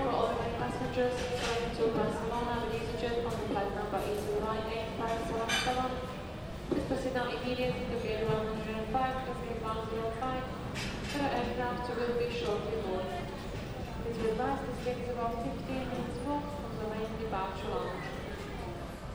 UK - Ambience Gatwick Airport north terminal - 13 13 - 03 11 2010
waiting for my flight. The screaming Italian family; people missing the plane and I am about there...